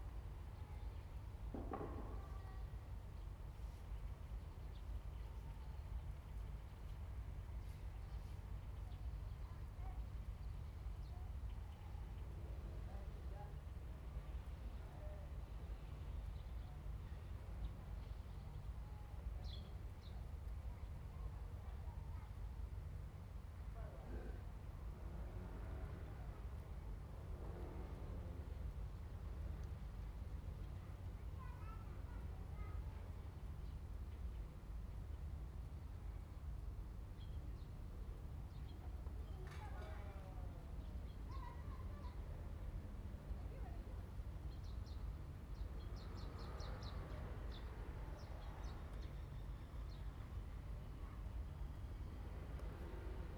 In the square, in front of the temple, Birds singing, Small village
Zoom H2n MS +XY